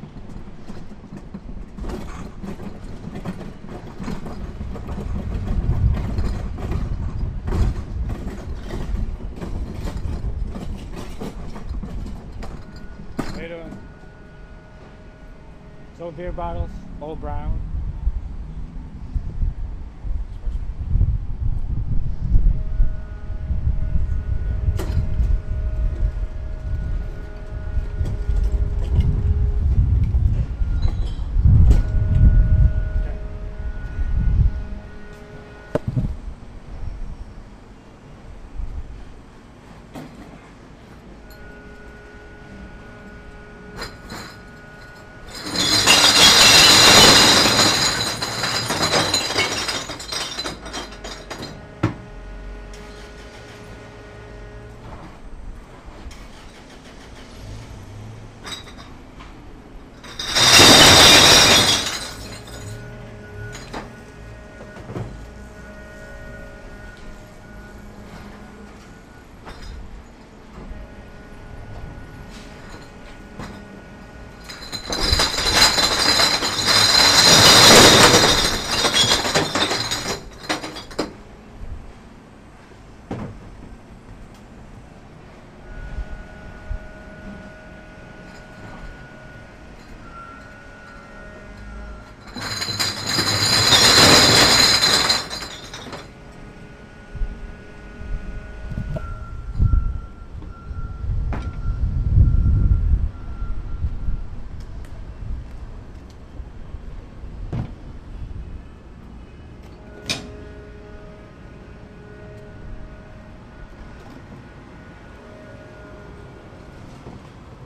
surprisingly clean and quiet recycling center ..... beer bottles return worth $14.17
19 July, 13:15